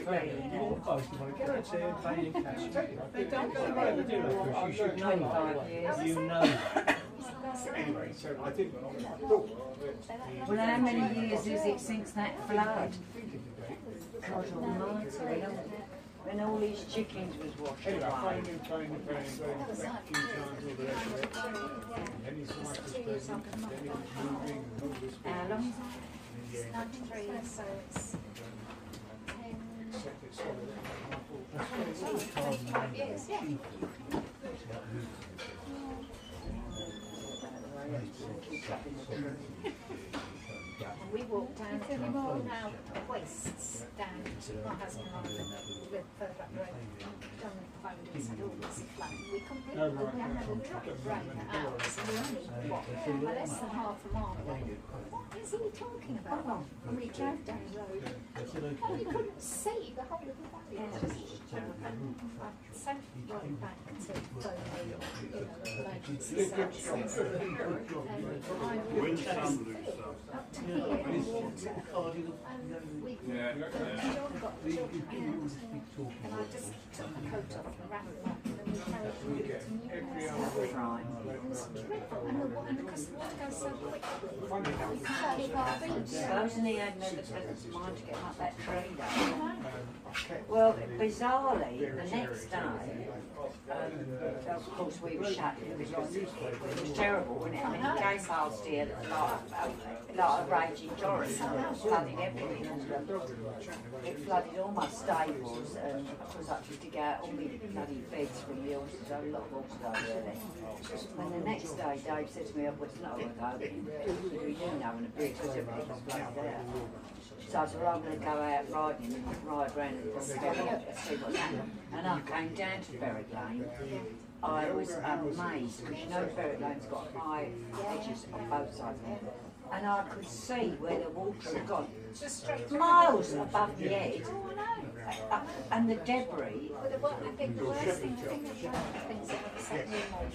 The Mole Trap Public House, Theydon Mount, Epping, UK - The Mole Trap Public House.
This is a lovely old pub with no background music; just the sound of the human voice. Recorded on a Samsung S6 Edge.
12 March 2018